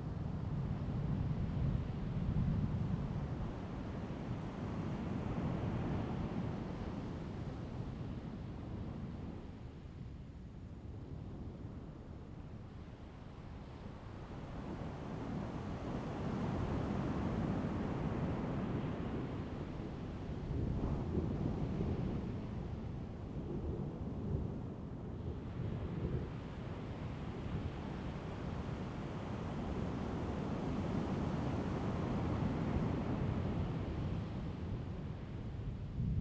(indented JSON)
{
  "title": "寧埔, Taitung County - sound of the waves",
  "date": "2014-09-08 14:13:00",
  "description": "Sound of the waves, Traffic Sound, Thunder\nZoom H2n MS+XY",
  "latitude": "23.23",
  "longitude": "121.41",
  "altitude": "5",
  "timezone": "Asia/Taipei"
}